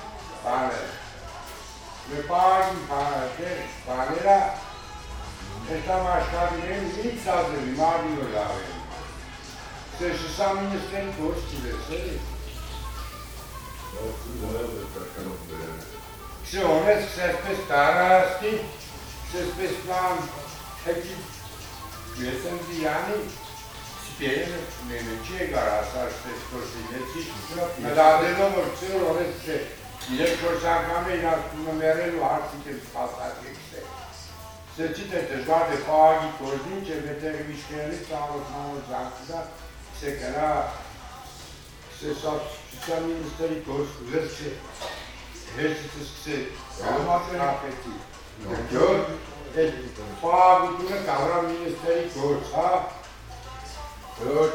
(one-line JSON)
{"title": "Gyumri, Arménie - Bakery pastry", "date": "2018-09-09 09:30:00", "description": "Into a sad bakery pastry, an old client is discussing with the old baker. It's the local market day. The baker looks so sad that Droopy character is a joker beside to this old man.", "latitude": "40.78", "longitude": "43.84", "altitude": "1523", "timezone": "GMT+1"}